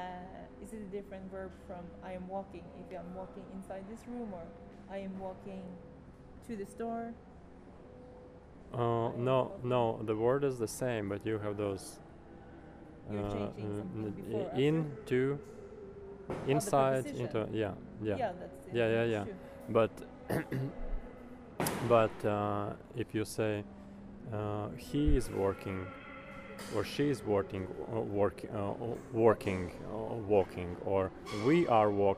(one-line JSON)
{"title": "Columbus College of Arts & Design, walking two blocks south to get a sandwich - Old car dealership", "latitude": "39.96", "longitude": "-82.99", "altitude": "234", "timezone": "Europe/Berlin"}